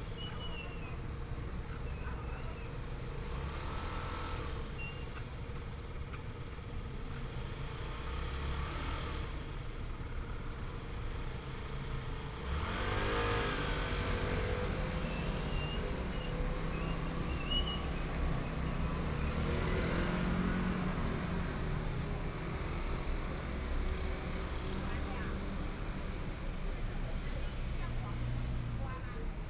花蓮市國富里, Taiwan - Corner the market
sitting in the Corner of the market, Traffic Sound
Binaural recordings
Zoom H4n+ Soundman OKM II